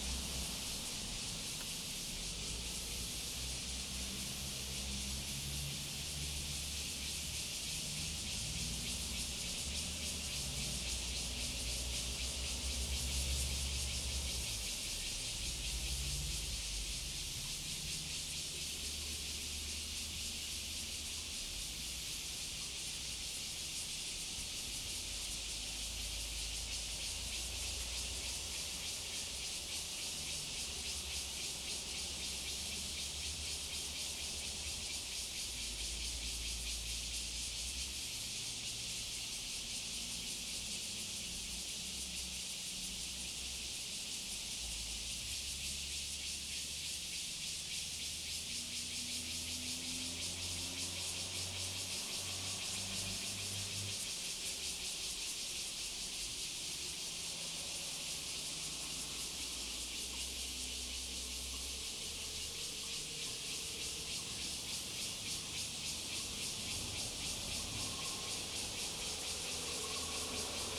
Dongyan, Sanxia Dist., New Taipei City - Cicada sound
Cicada, traffic sound, Zoom H2n MS+XY